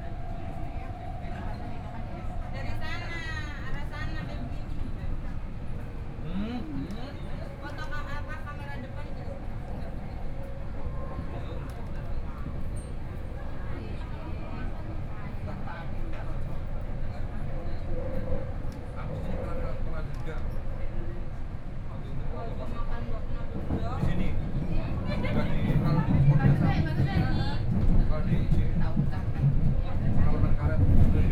Tamsui District, New Taipei City - Tamsui Line (Taipei Metro)
from Zhuwei Station to Tamsui Station, Binaural recordings, Zoom H6+ Soundman OKM II